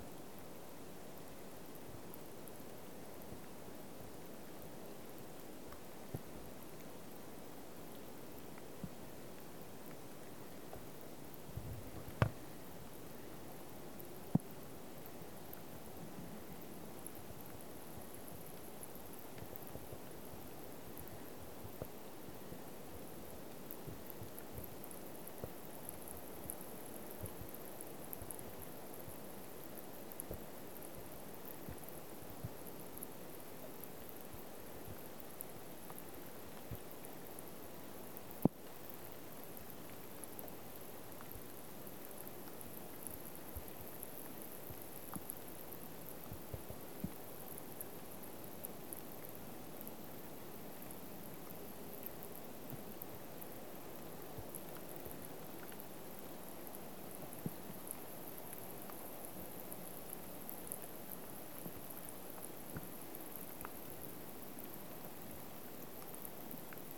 A very faint rain was falling over the surface of the lake. The Zoom H4N Pro mic was held horizontally almost touching the water